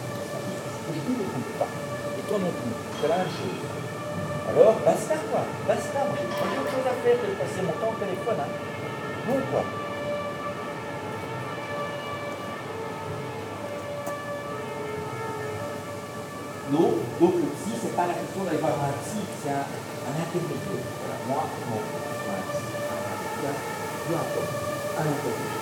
Bruxelles, Belgium - Quiet street
Into a very quiet area, a person having problems and loudly phoning in the street, wind in the trees, distant sound of sirens.